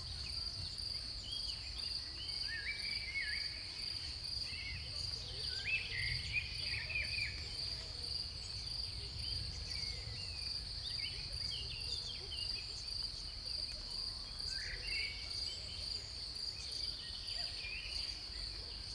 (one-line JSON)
{"title": "Maribor, Vinarje - after the rain", "date": "2012-05-30 18:50:00", "description": "small valley, after the rain, crickets, birds, distant thunder, voices of nearby houses, a car.\n(SD702, AT BP4025)", "latitude": "46.58", "longitude": "15.63", "altitude": "292", "timezone": "Europe/Ljubljana"}